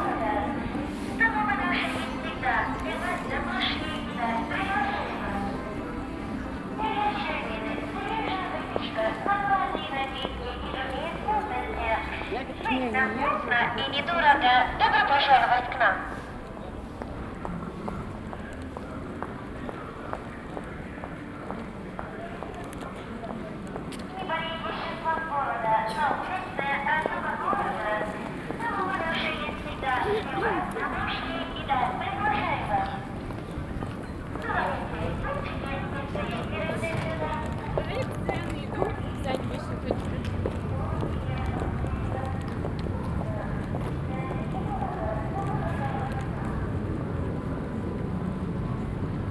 From one end of the pedestrian street to the other. Interference of attention grabbing sounds. Megaphones, loudspeakers footsteps, voices. Recorded with Tascam DR-07 plus Soundman OKM Klassik II.
Irkutskaya oblast', Russia, 23 October 2015, ~16:00